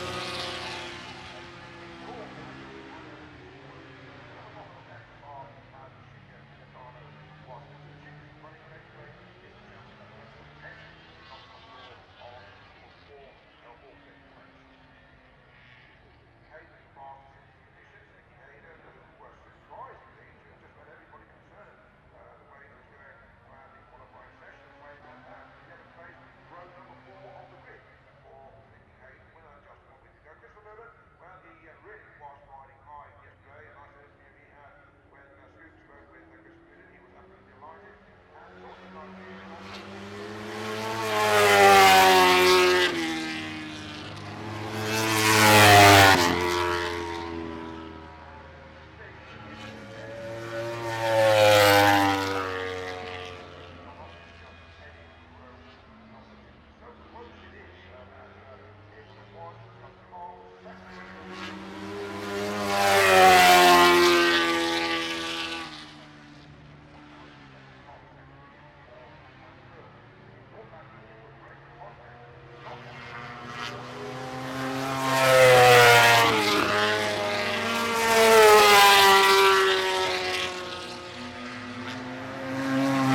Unnamed Road, Derby, UK - British Motorcycle Grand Prix 2006 ... MotoGP warmup ...
British Motorcycle Grand Prix 2006 ... MotoGP warm up ... one point stereo mic to mini-disk ...